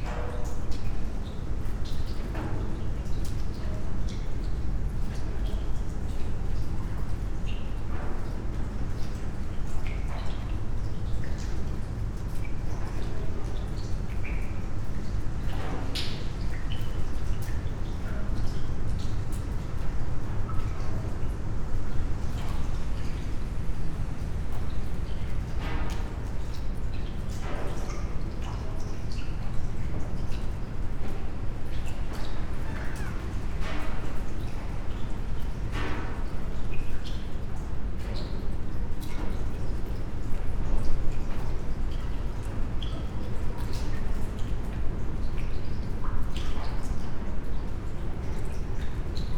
raindrops poema with spoken words as first flow ... on one of the floors of abandoned house number 25 in old harbor of Trieste, silent winds